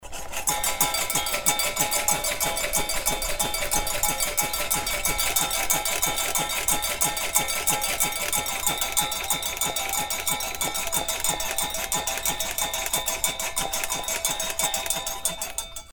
hoscheid, sound object, musikalische Zaungäste - hoscheid, sound sculpture, musikalische Zaungäste
and a third recording of the same object - this time shaking the object from the side
Projekt - Klangraum Our - topographic field recordings, sound art objects and social ambiences